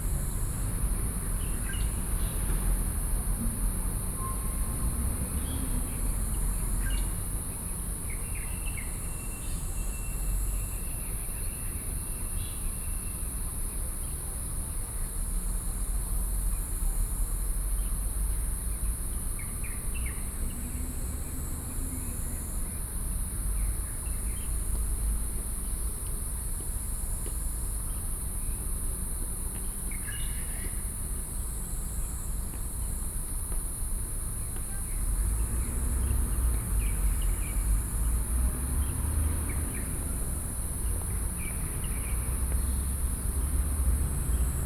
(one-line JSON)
{"title": "National Palace Museum, Taiwan - Plaza", "date": "2012-06-23 07:38:00", "description": "in the Plaza, Sony PCM D50 + Soundman OKM II", "latitude": "25.10", "longitude": "121.55", "altitude": "28", "timezone": "Asia/Taipei"}